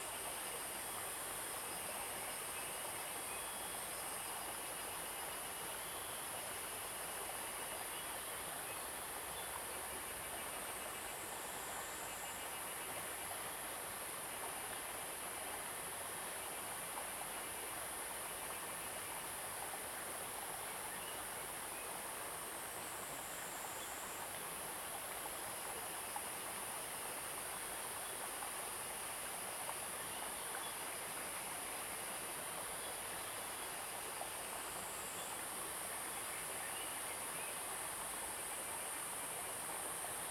種瓜路, 桃米里草楠 - Sound of water and Cicada
Cicada sounds, Sound of water
Zoom H2n MS+XY
Nantou County, Taiwan, May 2016